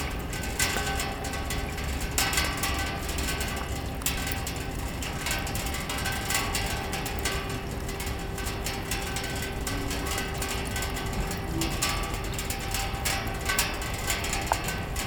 La Rochelle, France - Its raining
A constant rain is falling on La Rochelle this morning. Drops make percussive sounds on a metallic bench.